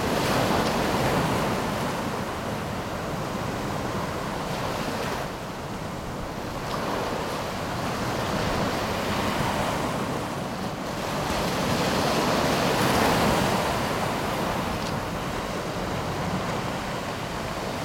Kostrena, Croatia, Sea Waves Massive - Sea Waves Massive
Moment in Relaxation
31 January 2014, ~11:00